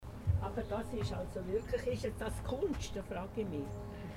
Marzili; Ist das Kunst?
Kunstbegehung von zwei alten Damen im Marzili, Kennerinnen des Bades, Kommentar zur Ausstellung Jetzt Kunst 2011